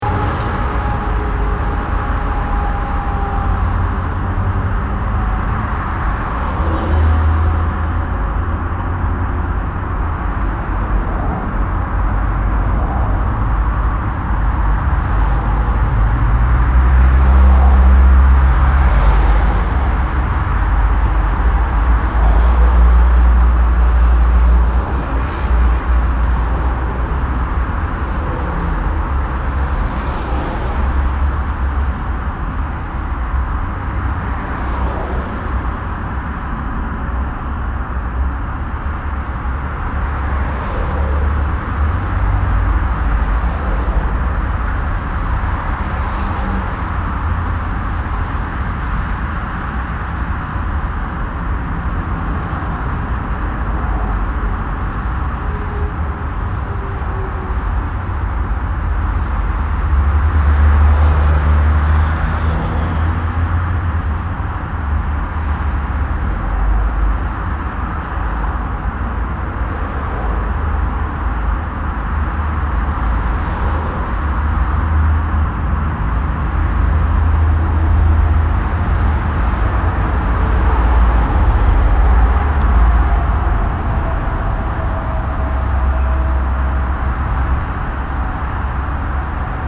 Südviertel, Essen, Deutschland - essen, A40, city highway tunnel
At the highway A40 that runs though the city and here into a tunnel. The sound of traffic passing by on a mild windy and sunny spring evening.
An der Autobagn A 40 die durch die Stadt und hier in einen Tunnel führt. Der Klang des vorbeirauschenden Verkehrs an einem leicht windigem, sonnigem Frühlingsabend.
Projekt - Stadtklang//: Hörorte - topographic field recordings and social ambiences
Germany, 12 April